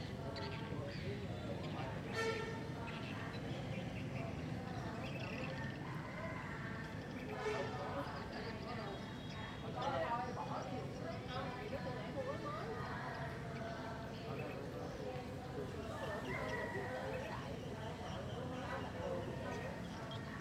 Trương Định, Châu Phú B, Châu Đốc, An Giang, Vietnam - Châu Đốc, Vietnam 01/2020
Châu Đốc soundscapes - chanting, swift farm, street sound, neighbors talking, laughing ** I was told that the old lady next door died, and these chants are part of ritual (catholic) after 100 days of mourning .... this is my personal favorite sound recording up to date, I was waiting for a moment on my recent trip to Vietnam, though it will be more nature like, but this one exceeded my expectations, please enjoy ...